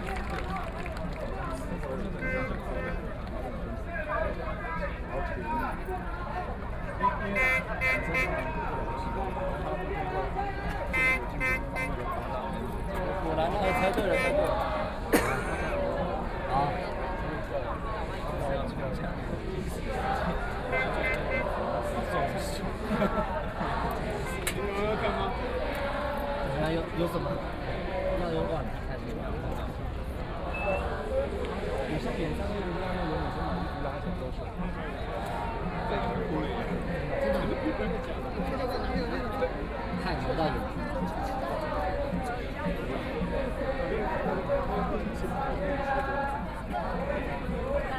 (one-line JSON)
{"title": "National Chiang Kai-shek Memorial Hall - Protest event", "date": "2013-10-10 14:06:00", "description": "Protesters gathered in front of the ladder, Collective shouting and singing, Binaural recordings, Sony PCM D50 + Soundman OKM II", "latitude": "25.04", "longitude": "121.52", "altitude": "11", "timezone": "Asia/Taipei"}